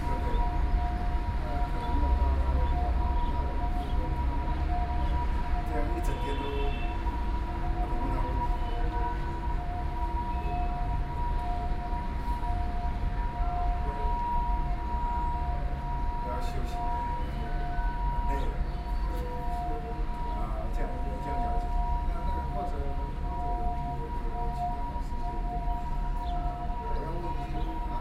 Zhuwei Station, New Taipei City - In subway stations
Danshui District, New Taipei City, Taiwan, 8 November